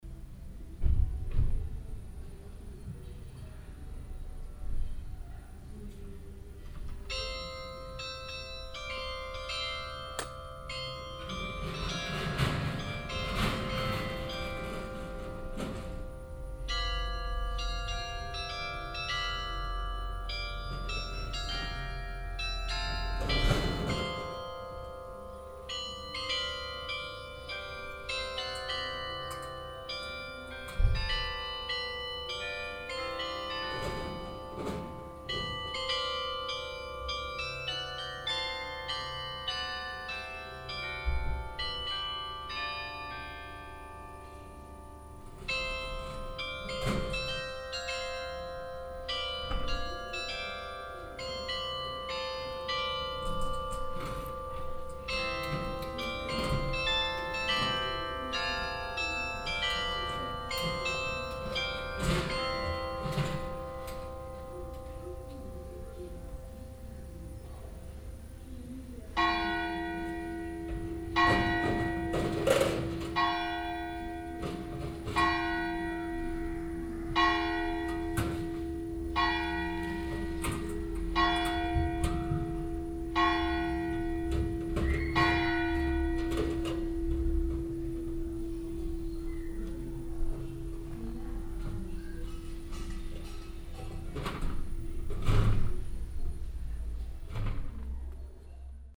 On the square in the morning. Sounds from people removing equipment, the bakery and 9 o clock bell melody plus the hour bells from the bell tower reflecting on the empty stone surface.
Vianden, Place de la Resistance, Morgenglocke
Auf dem Platz am Morgen. Geräusche von Menschen, die Sachen transportieren, die Bäckerei und die 9-Uhr-Glockenmelodie sowie das Stundengeläut vom Glockenturm, das von der leeren Steinoberfläche widerhallt.
Vianden, place de la résistance, carillon du matin
Le matin sur la place. Bruit de personnes qui enlèvent des choses, le boulanger et la mélodie du carillon de 9h00, puis le clocher qui sonne les heures et dont le son se répercute sur le sol vide en pierre.
Project - Klangraum Our - topographic field recordings, sound objects and social ambiences
Vianden, Luxembourg, 9 August 2011, ~21:00